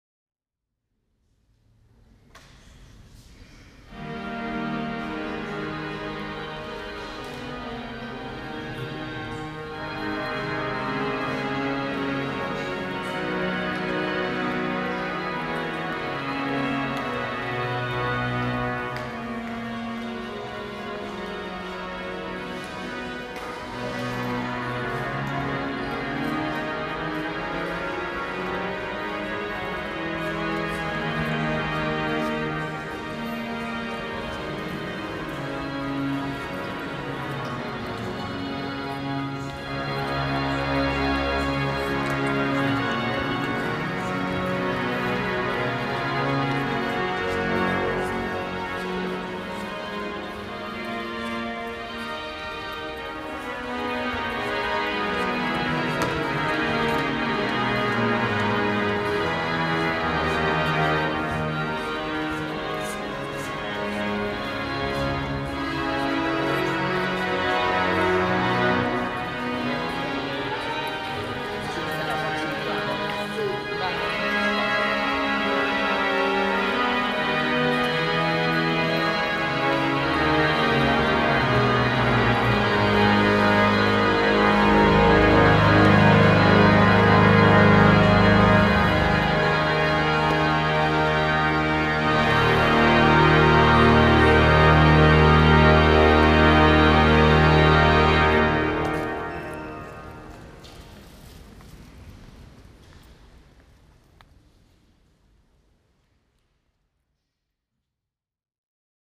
Organ in the Église Saint-Gervais-Saint-Protais, Paris. Binaural recording